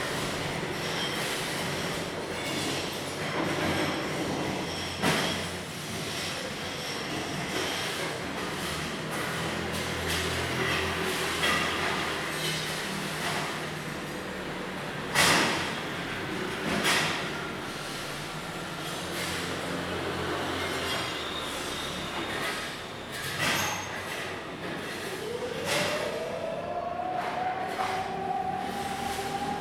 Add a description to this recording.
Construction Sound, Exercise sound alarm, Zoom H2n MS+XY